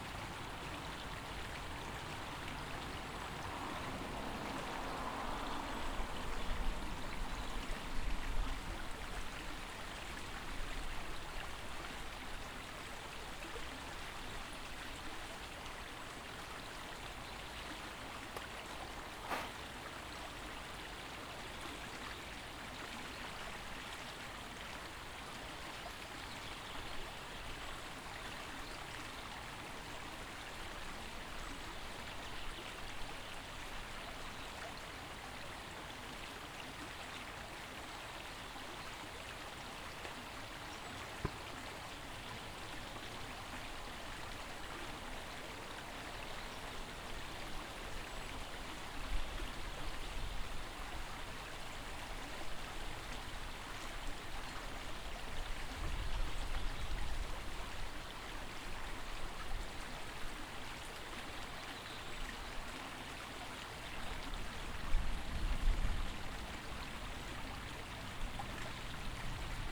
Britasvägen, Helsingfors, Finland - Stream by the grave yard
Ambient field recording for Central Park Archives project 2020.
Recorded with Zoom H4n.